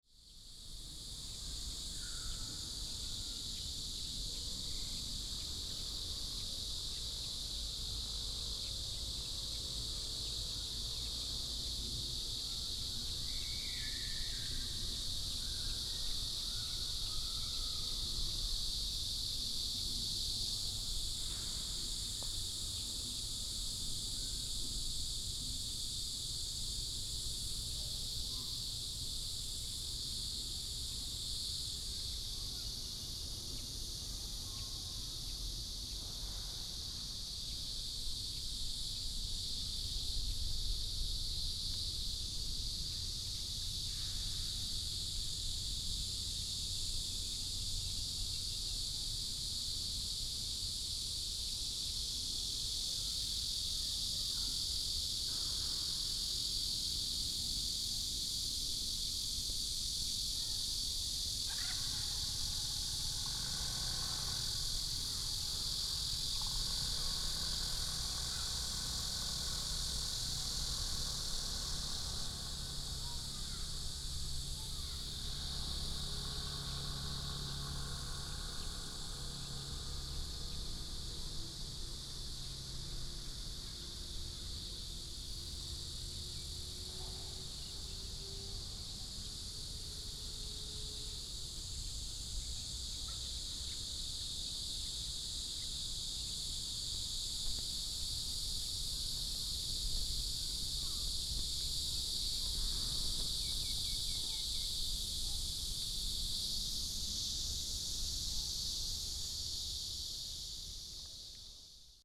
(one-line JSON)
{"title": "Ln., Sec., Guoji Rd., Taoyuan Dist. - Cicadas and Birds sound", "date": "2017-07-05 16:57:00", "description": "Cicadas and birds, In the square of the temple", "latitude": "24.97", "longitude": "121.29", "altitude": "118", "timezone": "Asia/Taipei"}